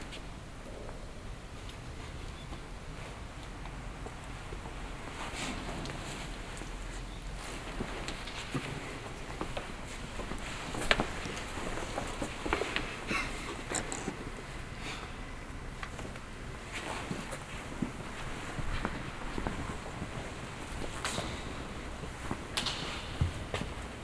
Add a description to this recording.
A walk around the "Münster" in Schwäbisch Gmünd, which is a big church.